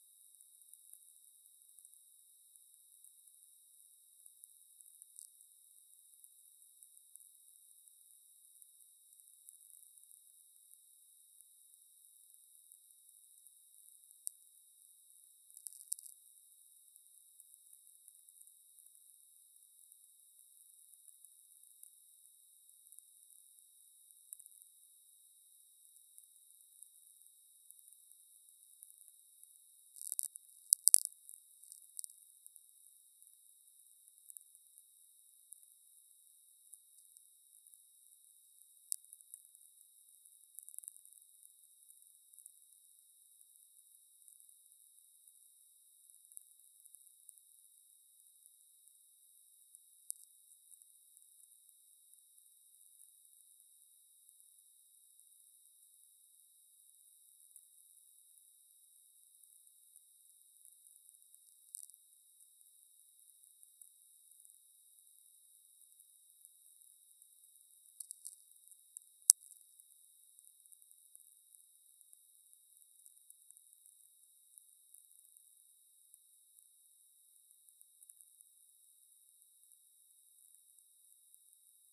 Grybeliai, Lithuania, listening to atmospheric vlf
handheld VLF receiver. listening to distant lightnings and atmospheric electricity
10 February, 15:30